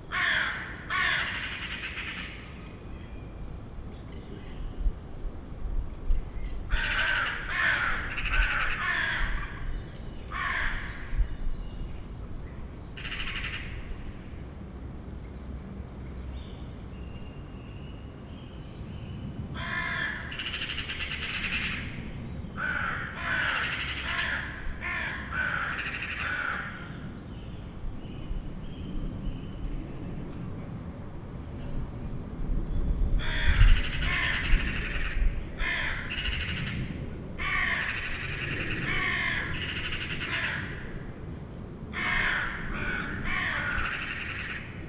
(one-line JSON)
{"title": "Birds in centre The Hague - Bird quarrel", "date": "2013-03-03 16:29:00", "description": "A little quarrel between Crows and Magpies.", "latitude": "52.08", "longitude": "4.31", "timezone": "Europe/Amsterdam"}